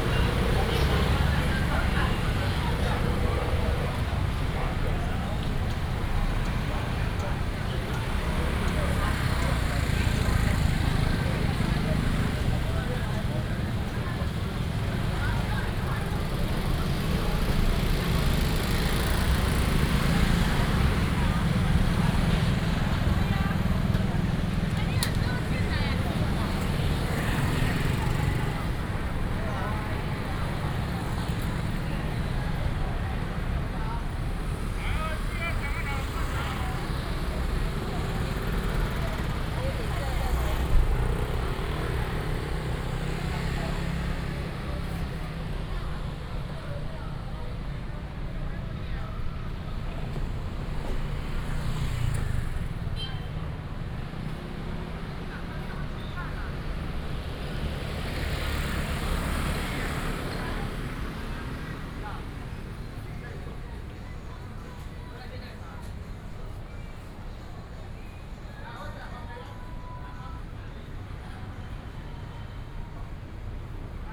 Zhongle Rd., Minxiong Township - in the traditional market area
Walking in the traditional market area, lunar New Year, traffic sound, vendors peddling
Binaural recordings, Sony PCM D100+ Soundman OKM II
Chiayi County, Taiwan, 15 February 2018